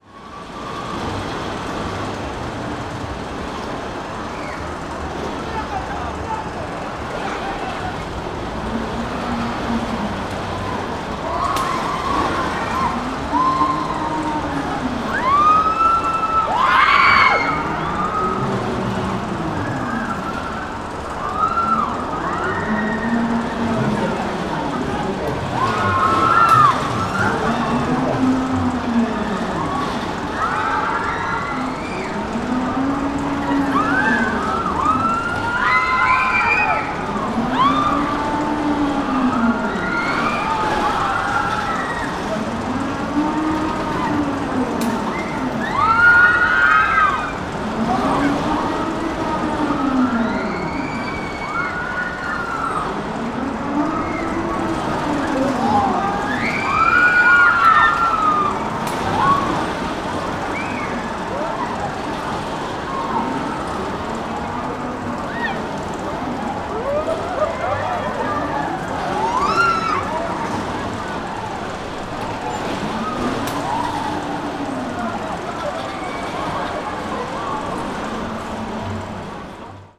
Berlin, Dircksenstr. - christmas fun fair: swinging hammer
a big swinging hammer next to the rollercoaster